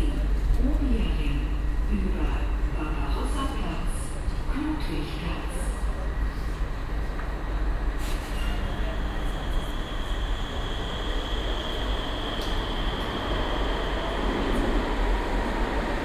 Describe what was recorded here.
soundmap: köln/ nrw, rolltreppen, schritte, einfahrt und ansage der strassen/ u bahn linie 15, morgens, project: social ambiences/ listen to the people - in & outdoor nearfield recordings